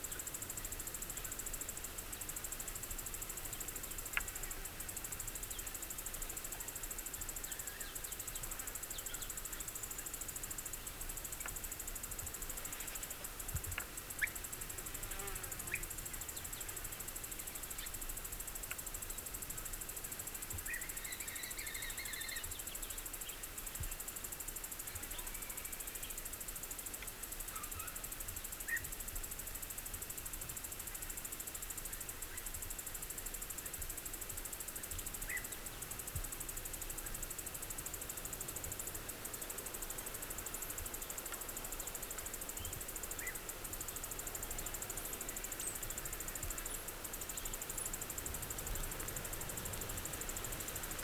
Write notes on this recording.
Arrábida during the day, cicadas, birds, distance traffic. DAT recording (DAP1) + MS setup (AKG C91/94)